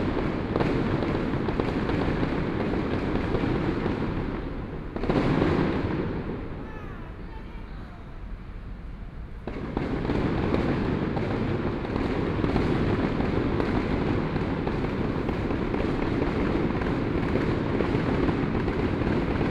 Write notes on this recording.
Sitting in the park, Fireworks sound, Footsteps, Aircraft flying through, Traffic Sound, Please turn up the volume a little. Binaural recordings, Sony PCM D100+ Soundman OKM II